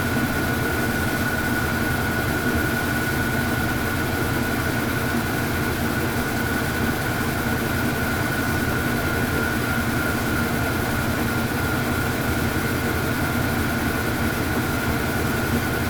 Recorded with a pair of DPA 4060s and a Marantz PMD661.